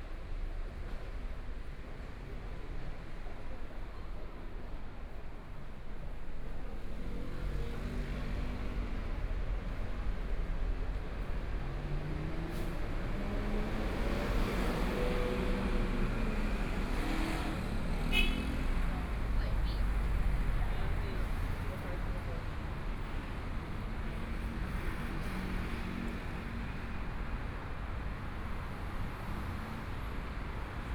walking on the Road, Traffic Sound, Motorcycle Sound, Pedestrians on the road, Various shops voices, Binaural recordings, Zoom H4n+ Soundman OKM II